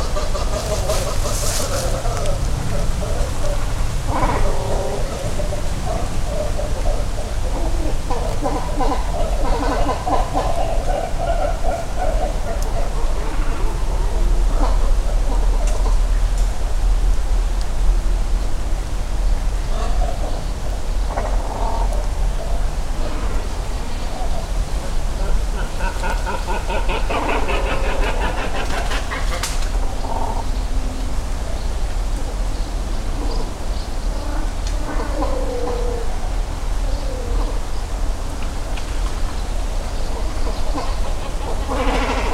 Hayashi, Ritto City, Shiga Prefecture, Japan - Great Cormorants Nesting
Great cormorant colony along a river in rural Japan. Recording ends at 13:00 as a distant factory siren announces the end of lunch break. Recorded with EM172 stereo mics attached to a large tree, Sony ECM M10 recorder.